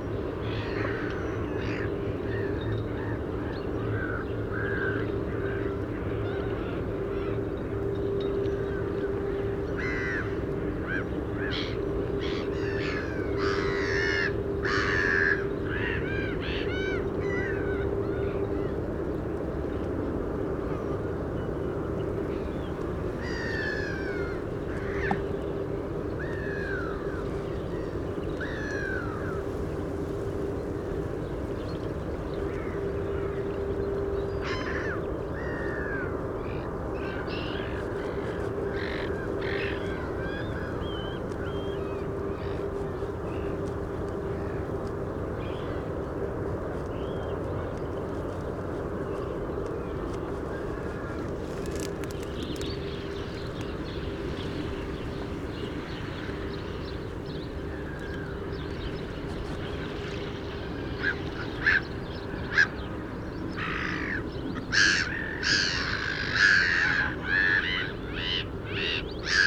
Eijsden, Netherlands - Eijsden Parabola
Birds on and around the water. Traffic from the Belgian side of the river, Church Bell.